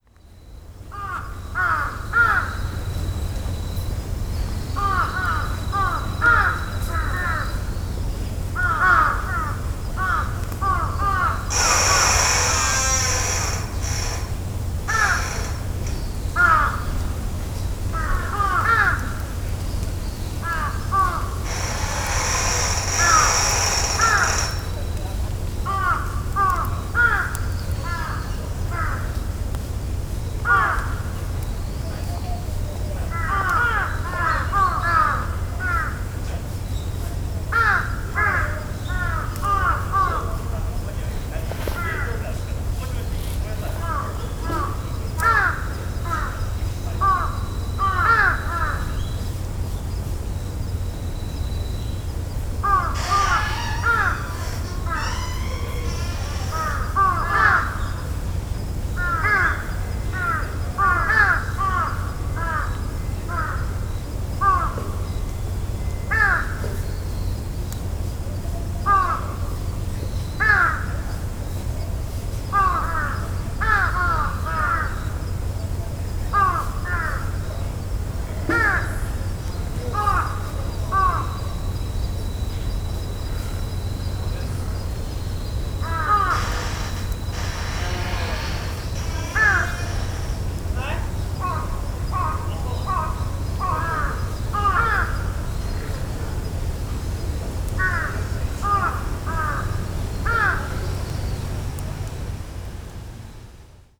Zushiokukachōchō, Yamashina-ku, Kyōto-shi, Kyōto-fu, Japonia - gate in the forest
ambience in a forest on a rainy day. you can also hear a metal gate being open. (roland r-07)